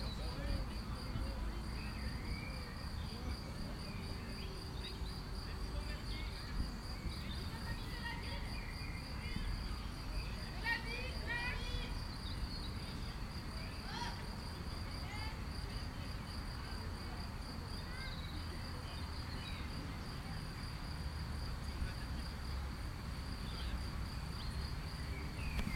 Meylan, France - Weddings photos in the park
There were 3 Weddings in the same time in the same place for making photos.
France métropolitaine, European Union, 15 June